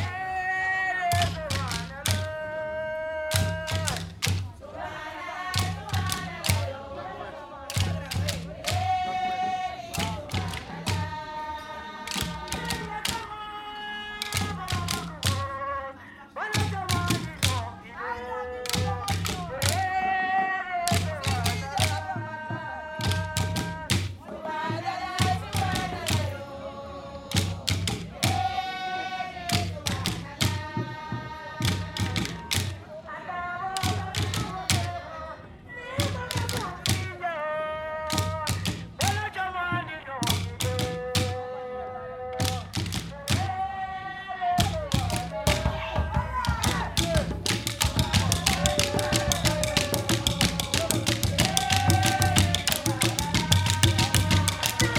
Av. José de Almada Negreiros Lte 3 5ºdto - Traditional wedding of Guinea-Bissau
Guiné-Bissau traditional wedding recording with a traditional musical group
3 August 2018, 18:15